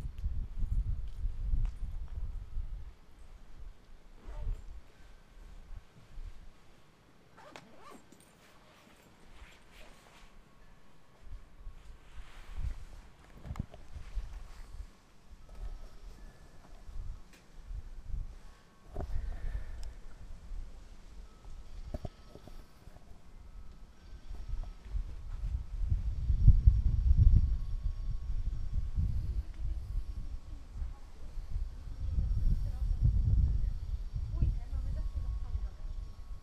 Dalkey, Co. Dublin, Irland - Waiting to Get Going
Waiting in front of our hosts' house to get started on the day's tour, overlooking the bay.
County Dublin, Leinster, Republic of Ireland, 12 April 2013